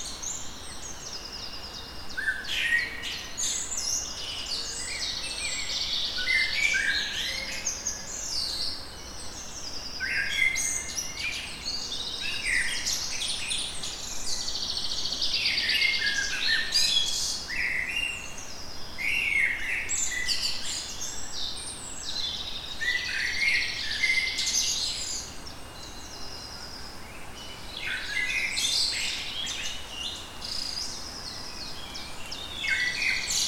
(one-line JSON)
{"title": "Pszczelnik Park, Siemianowice Śląskie, Polska - Morning birds", "date": "2019-05-01 06:40:00", "description": "Birds in the park.\nTascam DR-100 (UNI mics)", "latitude": "50.31", "longitude": "19.04", "altitude": "274", "timezone": "GMT+1"}